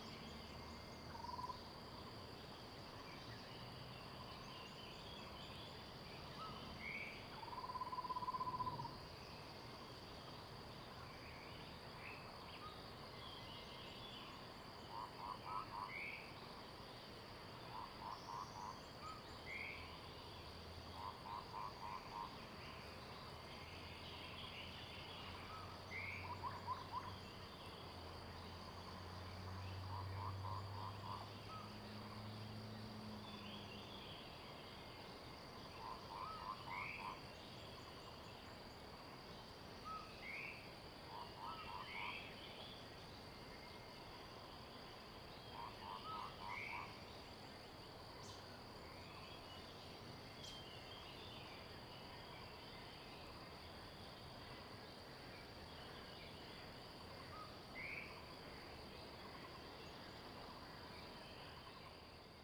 Shuishang Ln., Puli Township - Bird sounds
early morning, Bird sounds
Zoom H2n MS+XY
19 April 2016, 05:26